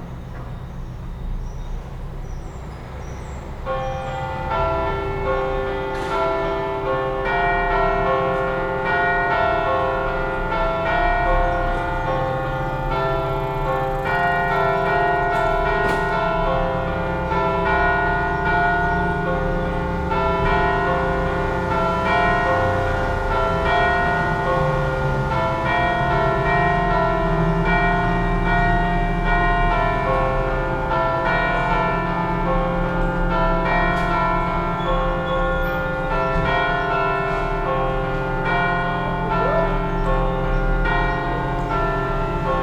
Volées de cloches, place de Paris, dimanche à 11 heures, enregistrées de ma fenêtre
Lyon, France, 19 March 2017